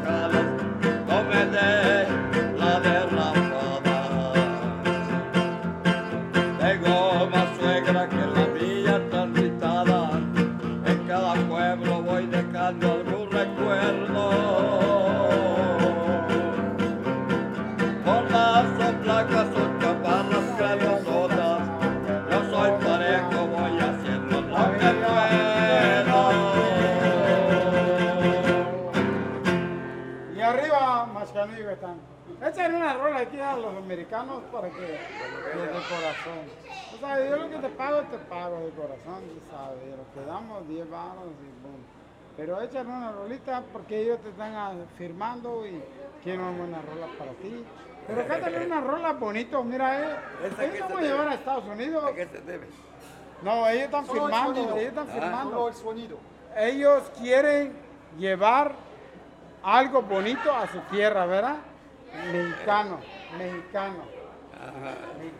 C., Centro, Maxcanú, Yuc., Mexique - Maxcanú - musicien
Maxcanú - Mexique
À l'intérieur du marché central - musicien